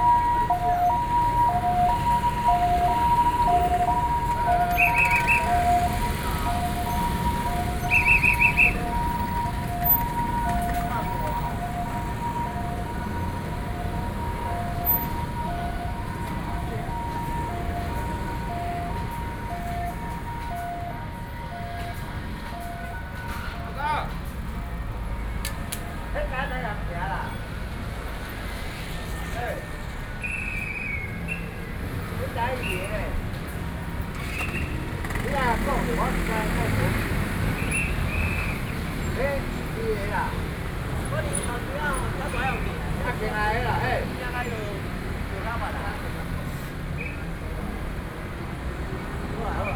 {"title": "Sec., Huanhe S. Rd., Wanhua Dist., Taipei City - The street corner", "date": "2012-12-04 16:37:00", "latitude": "25.04", "longitude": "121.50", "altitude": "15", "timezone": "Asia/Taipei"}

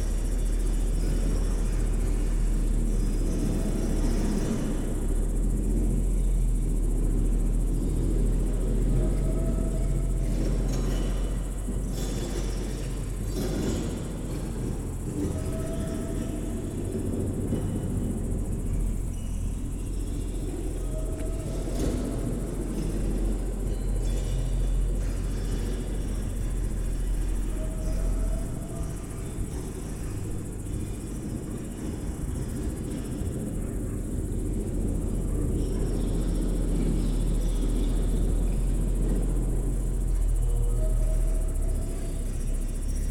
{"title": "ilmapall sound action", "date": "2009-08-12 23:26:00", "description": "'ilmapall' is an odd over sized fiberglass dome that ended up on a farm in the Estonian countryside. This recording was made with the vocal group 'Vaikuse Koosolek' who improvise with the space one summer evening while taking a break from a recording session.", "latitude": "57.76", "longitude": "27.21", "altitude": "194", "timezone": "Europe/Tallinn"}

